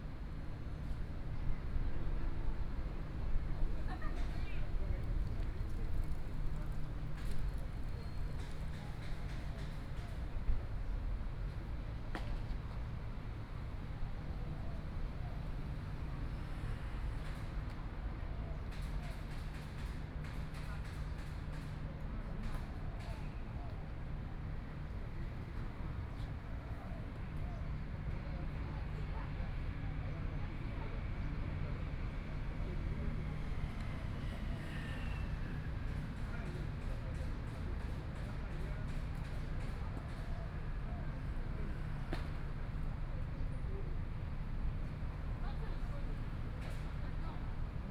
YiJiang Park, Taipei City - Holiday in the Park
Holiday in the Park, Sitting in the park, Traffic Sound, Birds sound, Many people leave to go back to the traditional holiday southern hometown
Please turn up the volume a little. Binaural recordings, Sony PCM D100+ Soundman OKM II
Zhongshan District, Taipei City, Taiwan, 4 April 2014